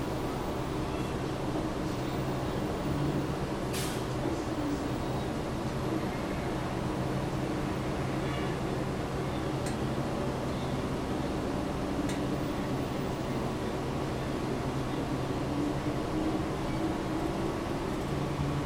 Central LA, Los Angeles, Kalifornien, USA - bus trip in LA
bus trip from west hollywood down north la cienega blvd, a/c in bus